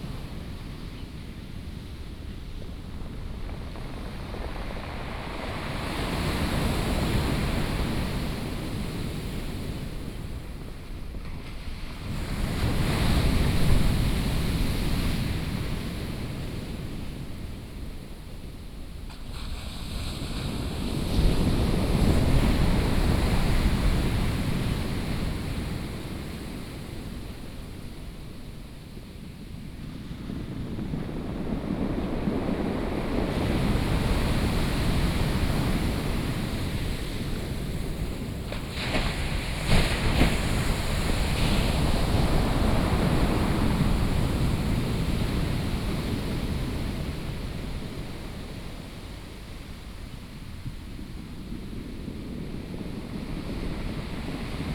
Nantian Coast Water Park, 台東縣達仁鄉 - Sound of the waves
Sound of the waves
Binaural recordings, Sony PCM D100+ Soundman OKM II
28 March, ~08:00